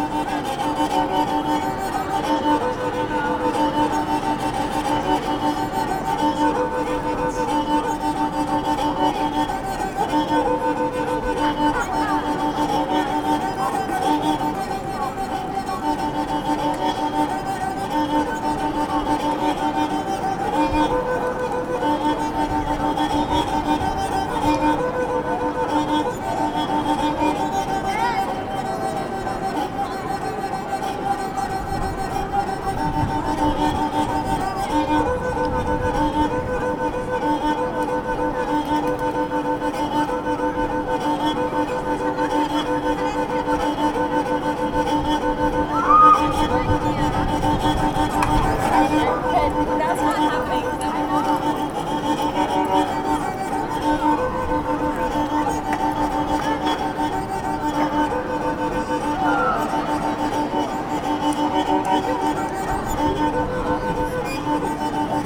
…Vagabondage.. errance... quelques fois blackboulés..souvent marginaux... sois disant dingues ! Human Alarm... "chevaux de génies" et autres Chevaliers à la joyeuse figure…
observed with : ++>